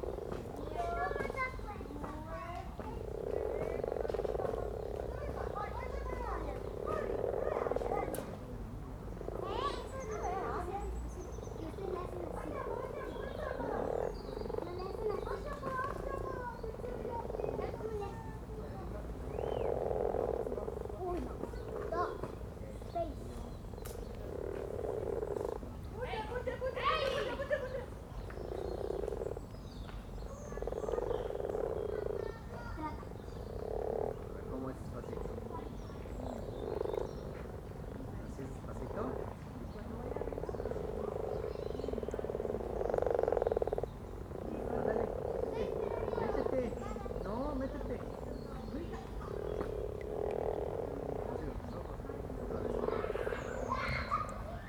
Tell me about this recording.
Dell pocket Park pond, mating frogs, kids playing in the playground